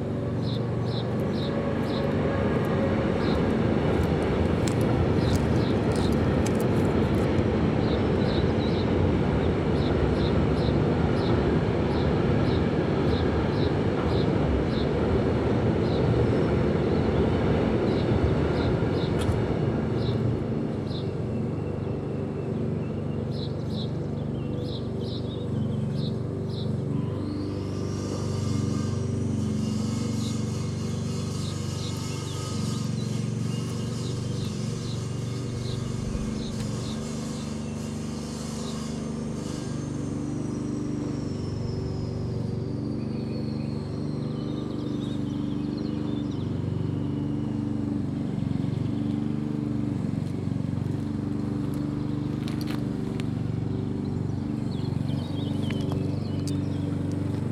Rudolf-Breitscheid-Straße, Bitterfeld-Wolfen, Deutschland - Greppin morning soundscape
The small town of Greppin is surrounded by chemical factories in the city of Bitterfeld. These are inaudible her, there's only human machines to hear.
Binaural mix from an ambisonic recording with a Sennheiser Ambeo
Anhalt-Bitterfeld, Sachsen-Anhalt, Deutschland, 19 May 2022